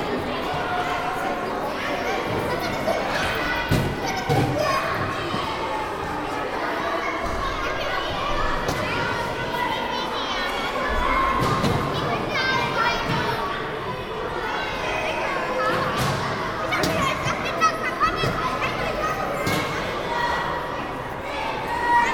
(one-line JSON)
{"title": "dortmund, immermannstraße, depot", "description": "foyer des depot theaters, eines umgebauten strassebahndepots, morgens, theaterfestival theaterzwang, kindertheaterpublikum vor dem einlass\nsoundmap nrw\nsocial ambiences/ listen to the people - in & outdoor nearfield recordings", "latitude": "51.53", "longitude": "7.45", "altitude": "72", "timezone": "GMT+1"}